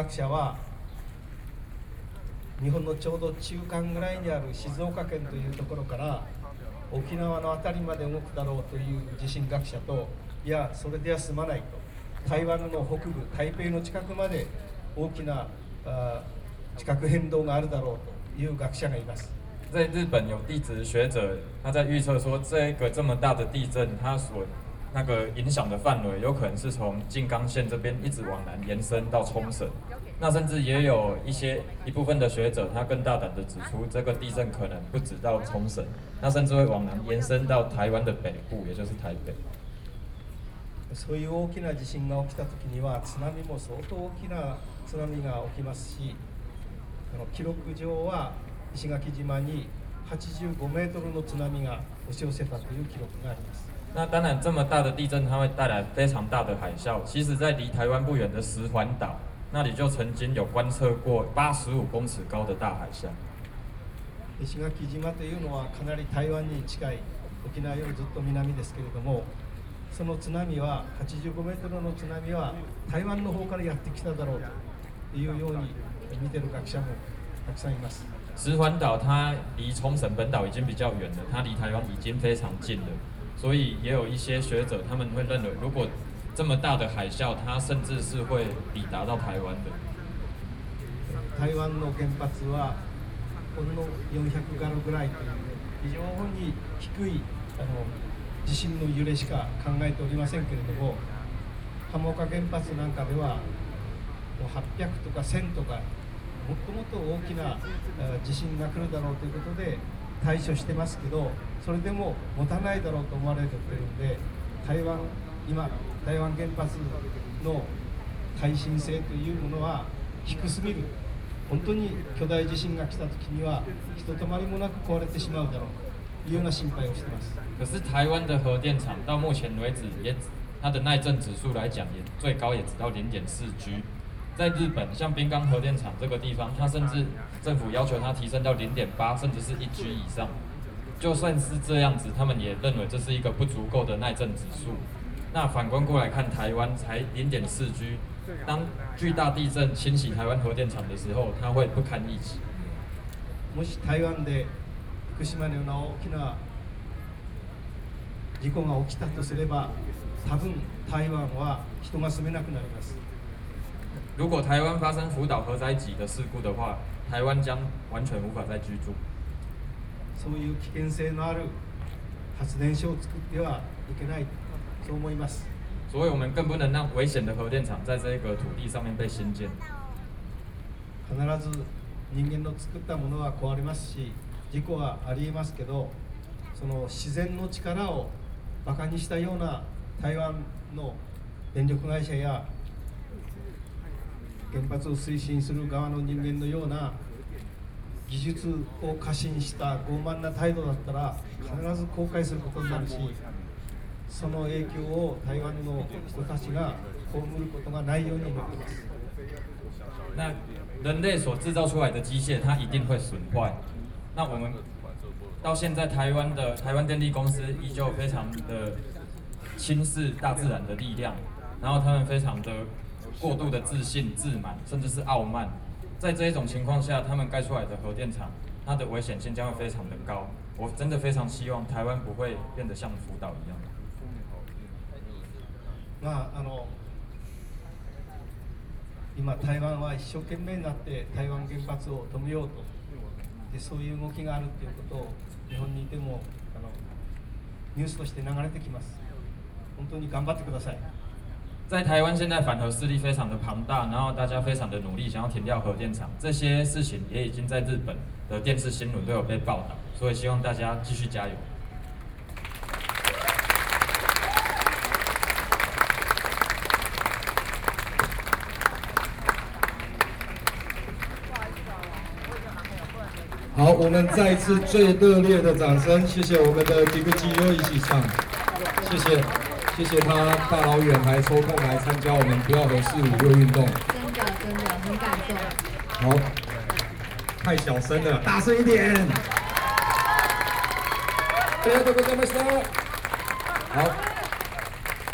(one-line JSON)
{"title": "National Chiang Kai-shek Memorial Hall, Taipei - anti–nuclear power", "date": "2013-06-14 19:11:00", "description": "Japanese anti–nuclear power activists, 菊地洋一（きくち よういち), Sony PCM D50 + Soundman OKM II", "latitude": "25.04", "longitude": "121.52", "altitude": "8", "timezone": "Asia/Taipei"}